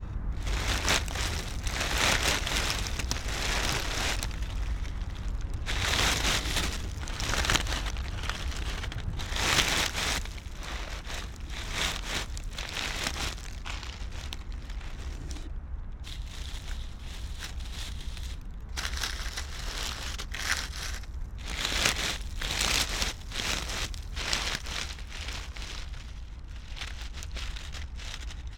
{
  "title": "Kruidtuin, Koningsstraat, Sint-Joost-ten-Node, België - Leafs",
  "date": "2013-03-26 14:00:00",
  "description": "We took a bunch of leafs and shaked them.",
  "latitude": "50.85",
  "longitude": "4.37",
  "altitude": "48",
  "timezone": "Europe/Brussels"
}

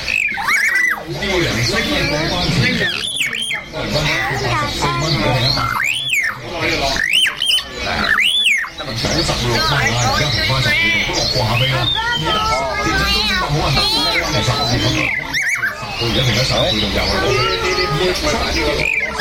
{
  "title": "yuen po street bird market",
  "date": "2010-07-06 09:37:00",
  "description": "birdmaschine, bow tie",
  "latitude": "22.32",
  "longitude": "114.17",
  "timezone": "Asia/Hong_Kong"
}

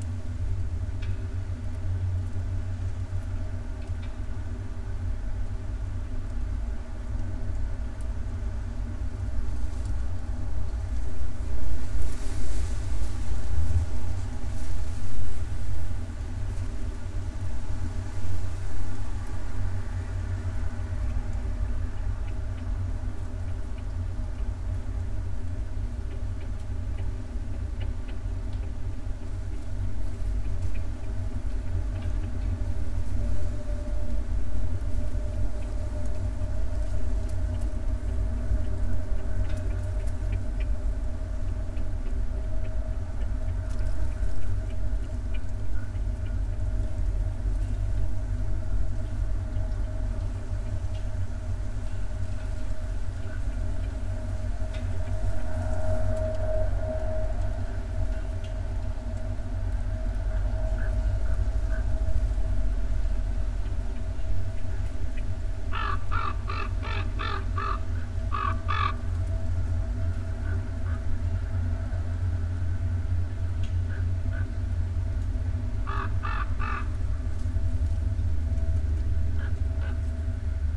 {
  "title": "Utena, Lithuania, in tube",
  "date": "2018-09-16 18:30:00",
  "description": "some abandoned empty metallic object on a ground. small microphones",
  "latitude": "55.49",
  "longitude": "25.64",
  "altitude": "134",
  "timezone": "GMT+1"
}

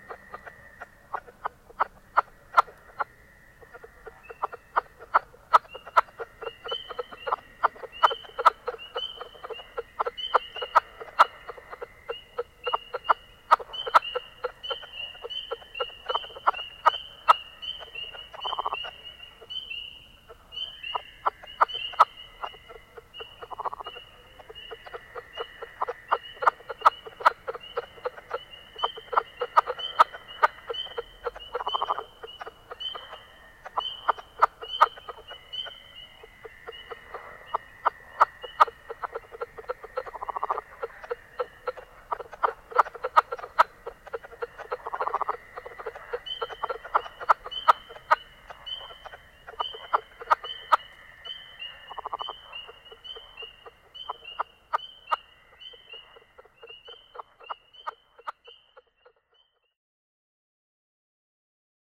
{
  "title": "Commercial Township, NJ, USA - spring frogs",
  "date": "2007-03-17 20:00:00",
  "description": "leopard frogs, spring peepers and Fowler's toads (FostexFR2LE AT3032)",
  "latitude": "39.24",
  "longitude": "-75.07",
  "altitude": "5",
  "timezone": "America/New_York"
}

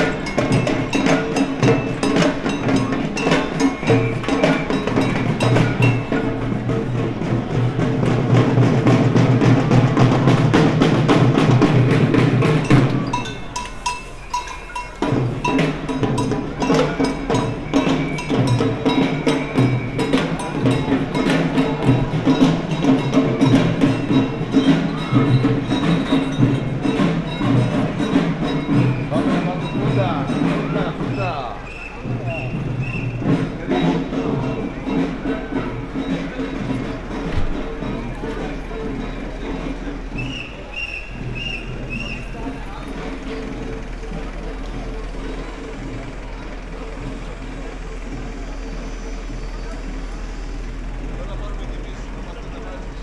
{
  "title": "Berlin, Cafe Kotti - ambience on balcony, demonstration passing-by",
  "date": "2014-06-29 17:20:00",
  "description": "ambience heard on the balcony of Zentrum Kreuzberg, sound of a demonstration, and a rare moment of only a few cars at this place.\n(log of an radio aporee live session)",
  "latitude": "52.50",
  "longitude": "13.42",
  "altitude": "41",
  "timezone": "Europe/Berlin"
}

